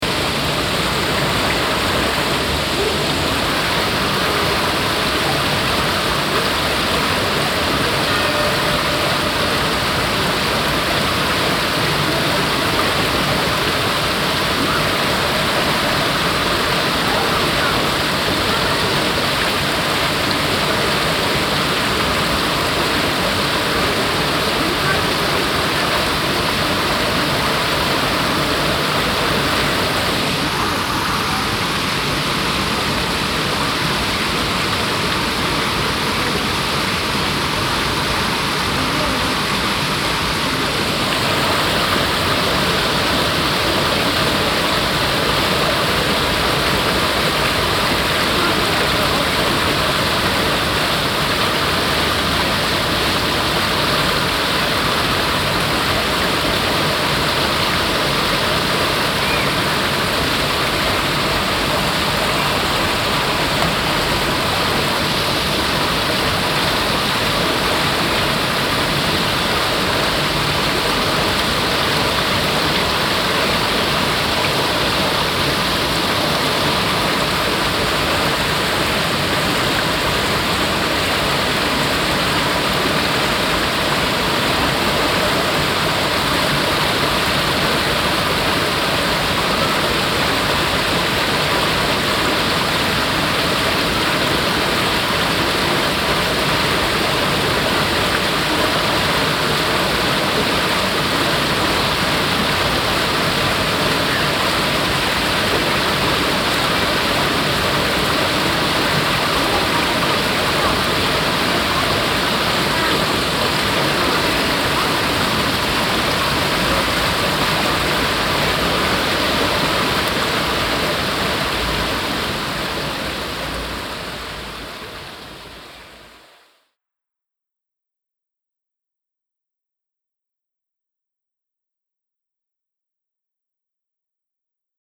essen, kettwiger street, fountain - essen, dom, fountain
Am Essener Dom. Der Klang des Brunnens zum zweiten Mal aufgenommen an einem warmen Frühsommertag.
An second recording of the fountain near the dom.
Projekt - Stadtklang//: Hörorte - topographic field recordings and social ambiences
Essen, Germany, 11 April 2014, 14:30